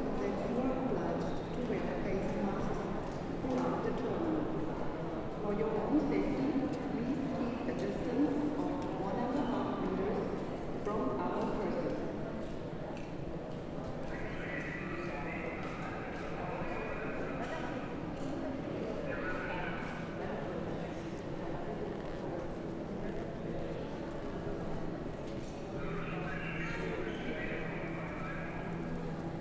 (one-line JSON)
{"title": "Frankfurt Aéroport, Flughafen Frankfurt am Main, Frankfurt am Main, Deutschland - Halle C, September 2020", "date": "2020-09-08 16:20:00", "description": "The last hall in a long row of entrance halls at Frankfurt Airport, FRA. Compared to may 2020 the whole airport was busy, even if Terminal 2 was still closed. A lot of travellers to Turkey gathered and are audible, two workers are discussing a construction. The whole hall rather reminds of a mixture of a factory and a modern, concrete church.", "latitude": "50.05", "longitude": "8.58", "altitude": "112", "timezone": "Europe/Berlin"}